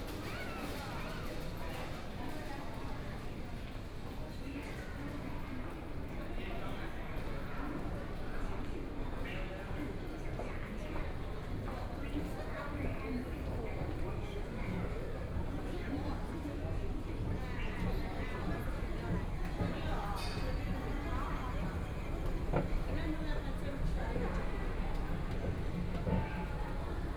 Out from the station platform to the station on the ground floor outdoor, Binaural recording, Zoom H6+ Soundman OKM II
Shanghai, China, 21 November 2013, 14:50